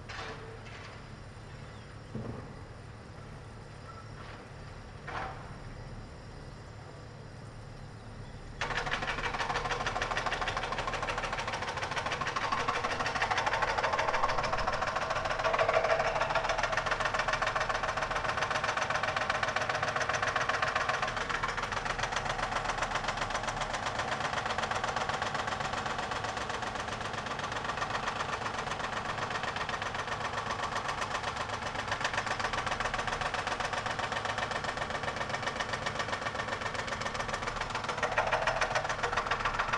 lisbon, travessa do salitre
hotel lisboa plaza, room 612, window open